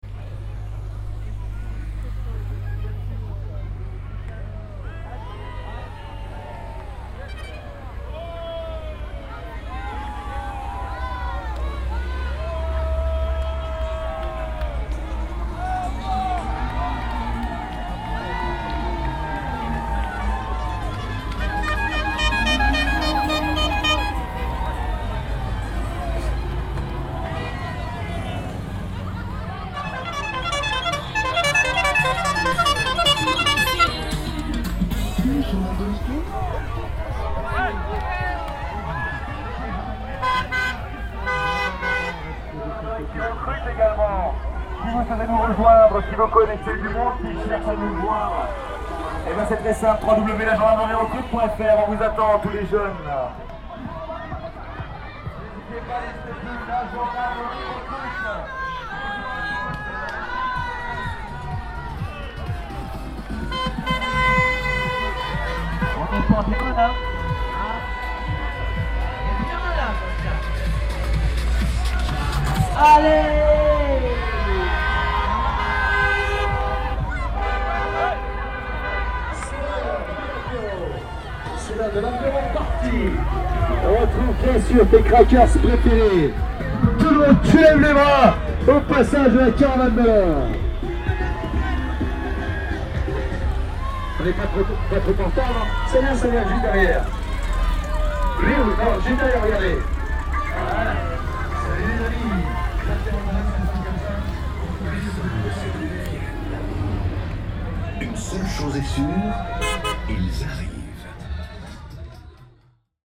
Luz Ardiden. Tour de France caravan
The Tour caravan. An advertising and promotional vehicle cavalcade that precedes the racing cyclists approx one hour before the actual race passes by
Sassis, France, 14 July 2011, 14:41